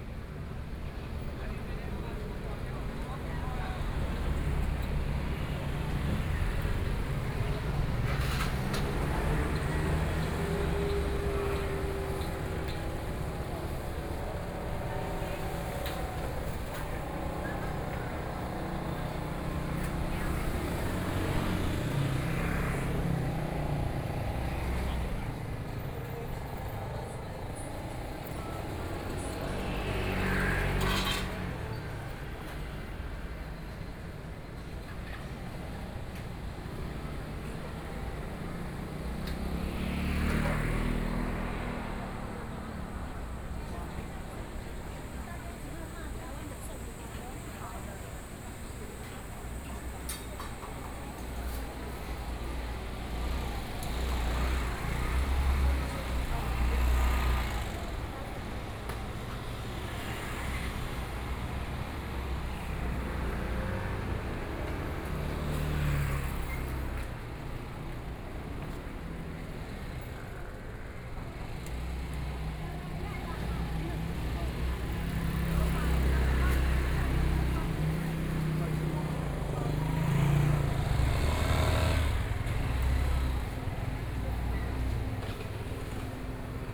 Xinshi St., Taipei City - soundwalk
Traffic Noise, The night bazaar, Binaural recordings, Sony PCM D50 + Soundman OKM II
17 October 2013, ~18:00